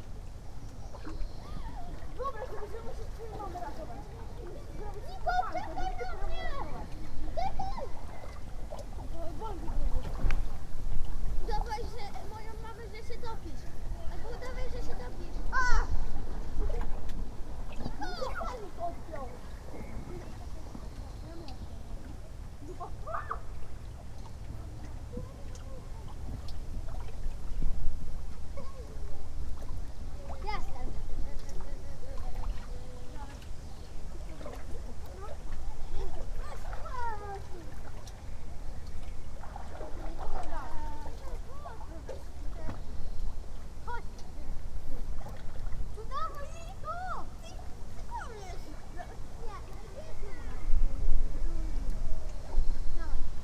Choczewo, Polska - pier at Choczewskie lake
kids playing in the lake at the public beach in Choczewo. one kid trying to make a somersault but scared to actually make the move. other kids cheering for him. in the end he jumps into the water but fails to make the stunt. (roland r-07)